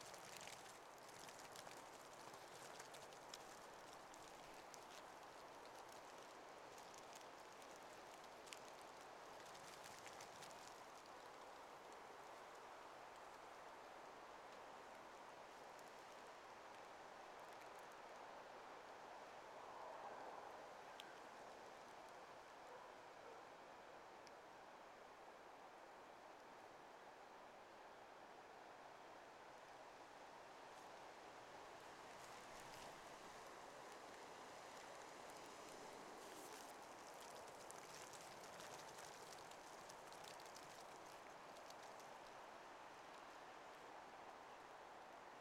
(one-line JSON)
{"title": "Nida, Lithuania, workshop leftovers", "date": "2018-06-21 18:25:00", "description": "stop tape in the wind", "latitude": "55.30", "longitude": "20.99", "altitude": "22", "timezone": "Europe/Vilnius"}